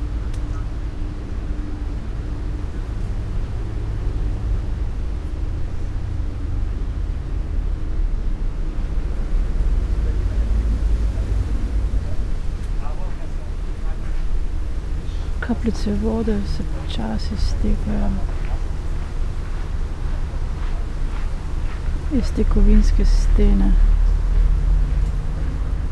spoken words, streets and river traffic, wind through willow tree
Sonopoetic paths Berlin